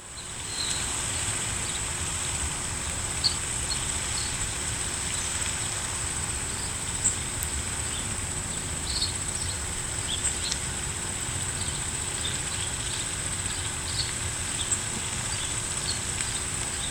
A walk around the lake, no signs of people, was just the sounds of the fountain going off and water splashing when the ducks swam around. There were also many birds chirping in the trees.
Illinois, United States, 13 October